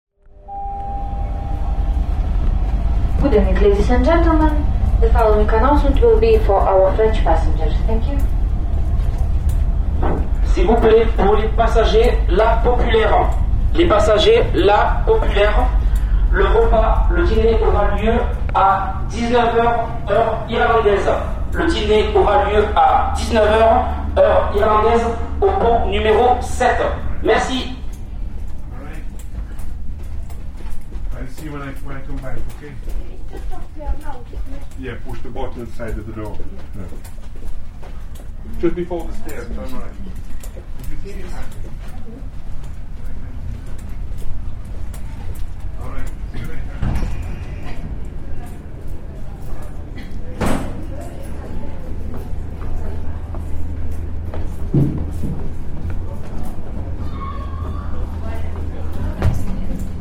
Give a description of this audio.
Taking the ferry from Rosslare to Cherbourg.